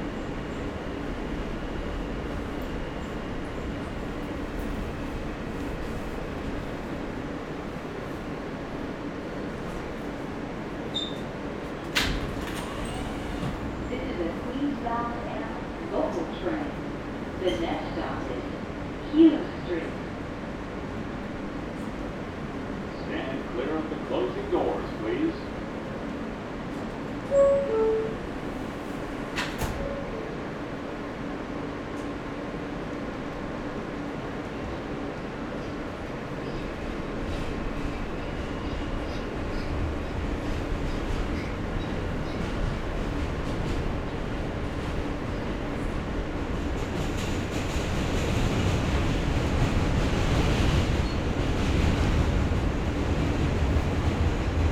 Wythe Av/S 5 St, Brooklyn, NY, USA - Returning Home from Work during Covid-19
Returning home from work during Covid-19.
Sounds of the M train, mostly empty.
Zoom h6